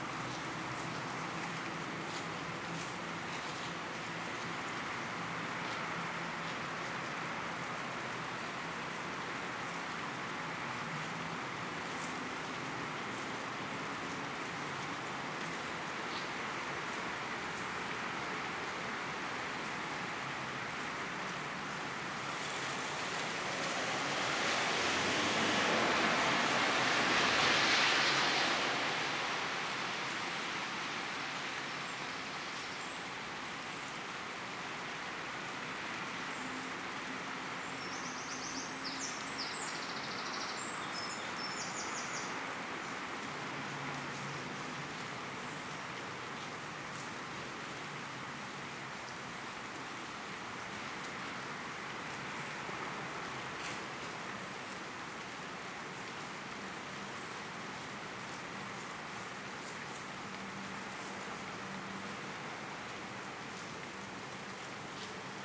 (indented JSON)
{
  "title": "10 Tierney Road - 5am Recording of Thunder, Rain and General Ambience.",
  "date": "2018-07-31 05:00:00",
  "description": "Not the best recording (setup in a hurry, to record the thunder before it passed) using a shotgun microphone sticking out the window. It was the first microphone on hand and I was half asleep and as I said...in a hurry!",
  "latitude": "51.44",
  "longitude": "-0.13",
  "altitude": "51",
  "timezone": "Europe/London"
}